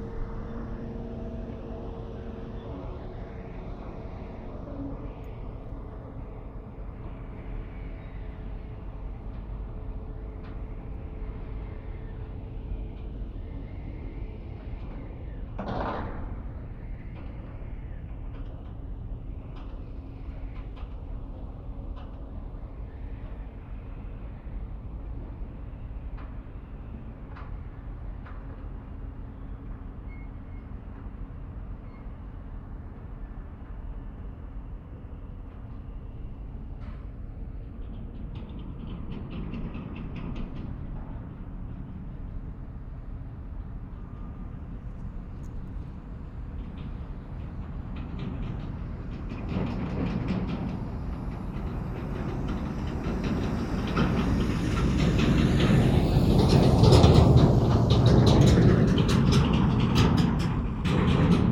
Container terminal at the port. Soundfield ST350, stereo decode.